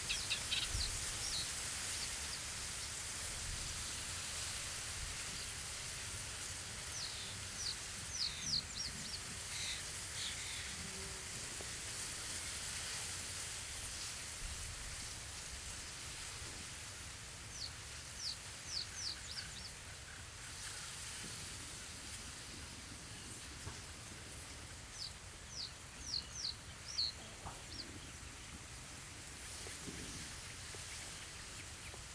Neuküstrinchen, Deutschland - river Oder bank, reed
at the river Oder, german / polish border, wind in reed
(Sony PCM D50, DPA4060)